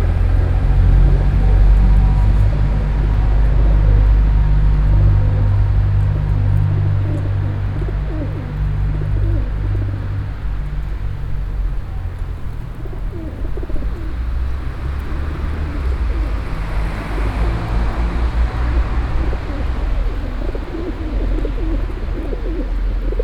Brussels, Rue Arthur Diderich, pigeons
Saint-Gilles, Belgium